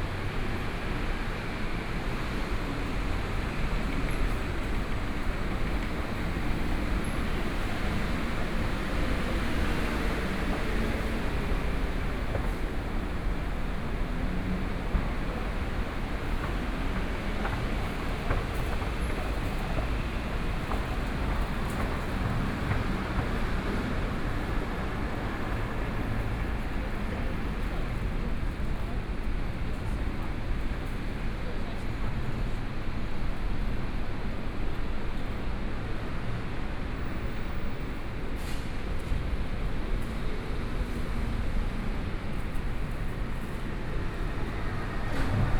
Nanjing Fuxing Station, Taipei City - Walking into the MRT station
Walking on the road, Traffic Sound, Walking into the MRT station
Songshan District, Taipei City, Taiwan, 2 May 2014